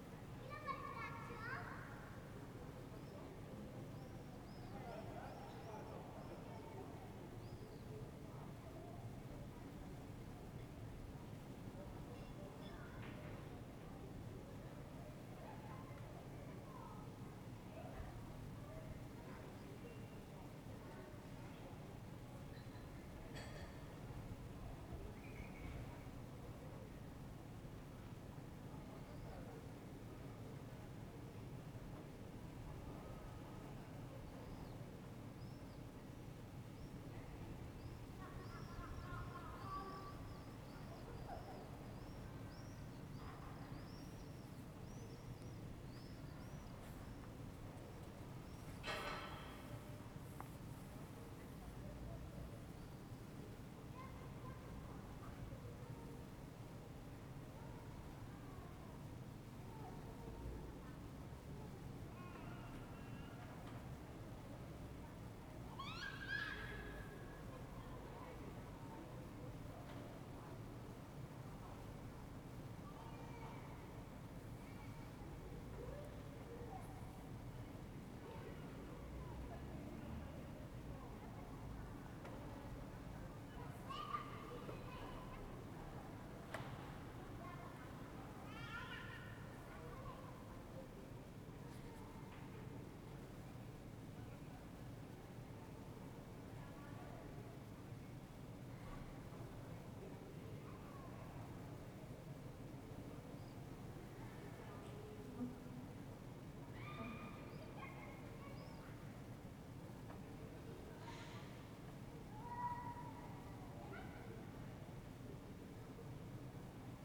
Ascolto il tuo cuore, città, I listen to your heart, city. Several chapters **SCROLL DOWN FOR ALL RECORDINGS** - Friday afternoon with barkling dog in the time of COVID19 Soundscape
"Friday afternoon with barkling dog in the time of COVID19" Soundscape
Chapter LV of Ascolto il tuo cuore, città. I listen to your heart, city
Friday April 24th 2020. Fixed position on an internal terrace at San Salvario district Turin, forty five days after emergency disposition due to the epidemic of COVID19.
Start at 5:03 p.m. end at 5:35 p.m. duration of recording 31’59”
Piemonte, Italia